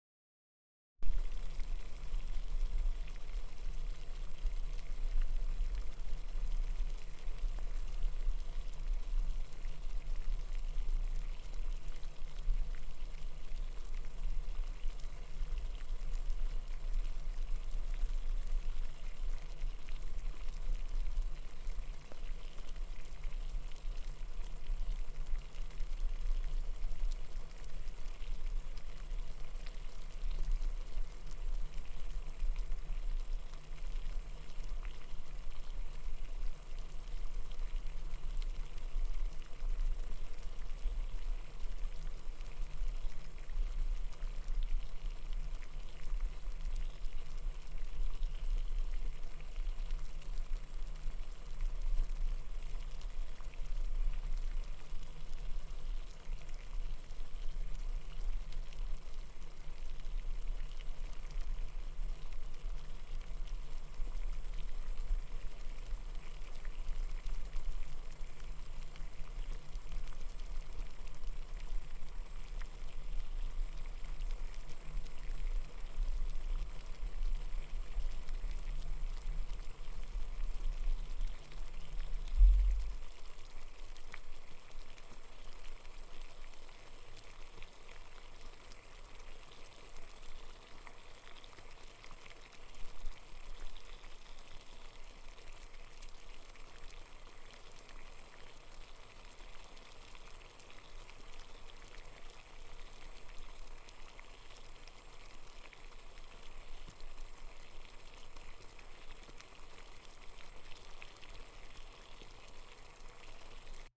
Ridge Rd, Dahlonega, GA, USA - Water Boiling in a Pot
On a Monday morning, water boils in a small pot on the stove, waiting for quick oats to be poured in. It was quiet in the apartment that day, everyone either still asleep or at class, and the water boiling could be heard on the other side of the apartment.
17 February 2020, 09:50